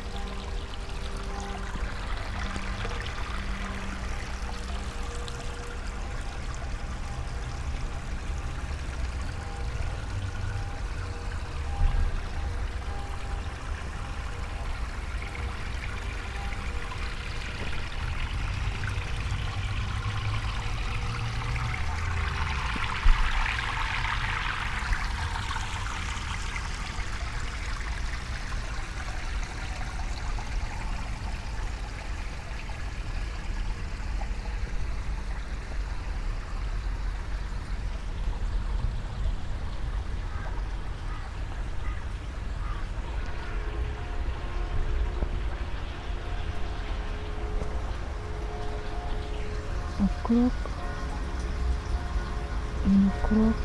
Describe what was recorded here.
round walk, water flow, bells, spoken words ...